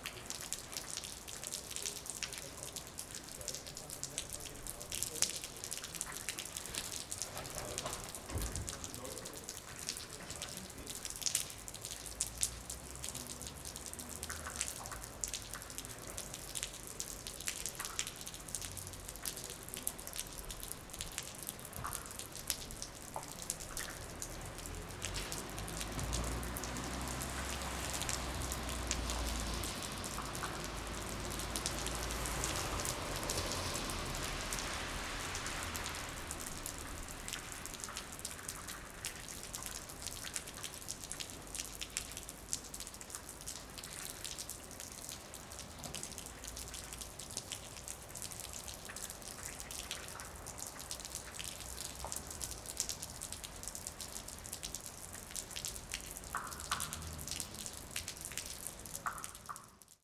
rain and melting snow, water drops from the roof on the sidewalk.
Berlin, Germany, 11 December 2010, 21:15